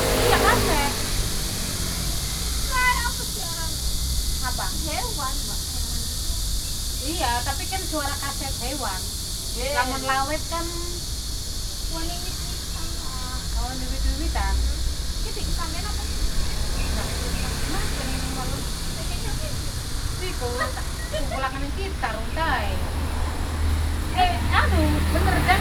{"title": "Shanjia, New Taipei City - Chat", "date": "2012-06-20 09:59:00", "description": "Women workers （Work taking care of the elderly in Taiwan）from abroad chatting, Sony PCM D50 + Soundman OKM II", "latitude": "24.97", "longitude": "121.39", "altitude": "31", "timezone": "Asia/Taipei"}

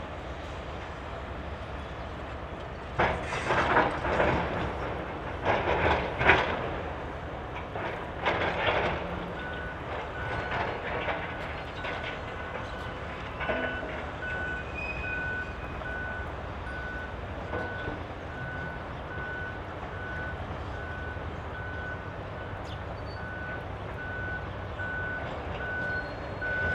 {"title": "allotment, Neukölln, Berlin - demolition of Magna factory", "date": "2013-11-01 12:10:00", "description": "sounds of demolition of former CD factory Magna, from a distance. the factory lies within the route of planned A100 motorway.\n(SD702, AT BP4025)", "latitude": "52.47", "longitude": "13.46", "altitude": "33", "timezone": "Europe/Berlin"}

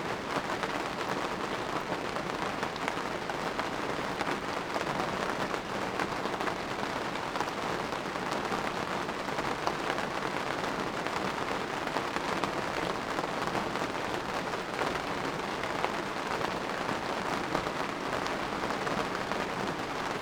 {"title": "Chapel Fields, Helperthorpe, Malton, UK - inside poly tunnel ... outside thunderstorm ...", "date": "2018-07-27 21:20:00", "description": "inside poly tunnel ... outside thunderstorm ... mics through pre amp in SASS ... background noise ...", "latitude": "54.12", "longitude": "-0.54", "altitude": "77", "timezone": "Europe/London"}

